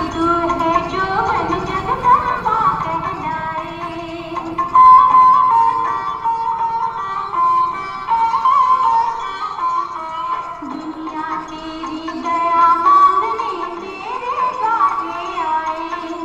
Omkareshwar, Madhya Pradesh, Inde - A musical atmosphere in the market square